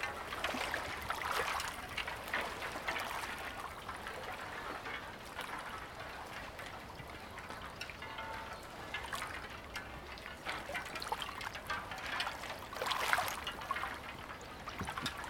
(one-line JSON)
{"title": "Lake Ekoln near Djupviken, Uppsala, Sweden - ice sheets jingling and clanking on lake Ekoln", "date": "2020-02-09 10:05:00", "description": "a cove full of thin broken ice sheets, clanking in the waves.\nrecorded with Zoom H2n set on a mossy tree, 2CH mode, windshield.", "latitude": "59.77", "longitude": "17.64", "altitude": "20", "timezone": "Europe/Stockholm"}